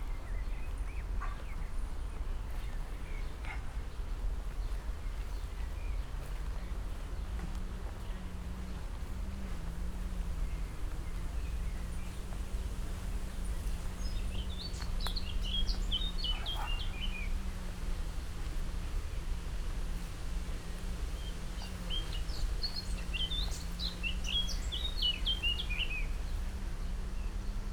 slow walk through the nice garden landscape between Beermanstr. and Kieffholzstr., along a newly build strange sanctuary for lizards. never sen one here before though.
Sonic exploration of areas affected by the planned federal motorway A100, Berlin.
(SD702, DPA4060 binaural)
17 May 2013, 16:40